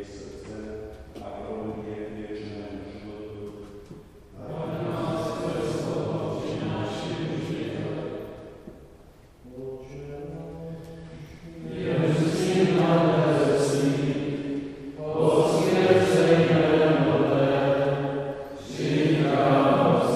Maria Angelica church of Capuchine Monastery
Mass at the Capuchine Monastery at Nový Svět, celebration of Saint Kyril and Methodeus, Czech Patrons.